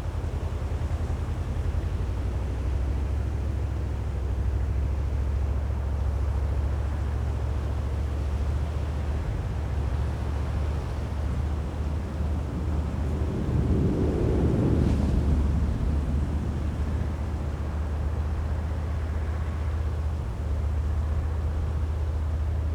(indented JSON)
{
  "title": "Lithuania, near Utena, from under the hay",
  "date": "2012-10-05 15:10:00",
  "description": "it's going to drizzle and I hid a recorder under a pile of hay..tractor working in the distance...",
  "latitude": "55.55",
  "longitude": "25.56",
  "altitude": "101",
  "timezone": "Europe/Vilnius"
}